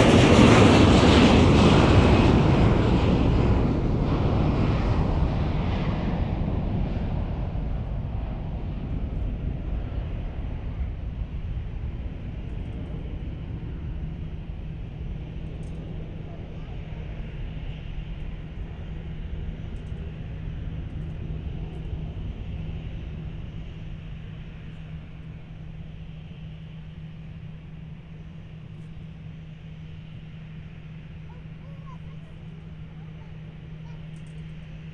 MSP Spotters Park - 30L Operations from the Spotters Park July 4, 2022
A nice warm summer Independence Day evening at the Minneapolis/St Paul International Airport Spotters Park. Planes were landing and taking off on 30L (The close runway) 30R and takeoffs on 17 at the time.
Hennepin County, Minnesota, United States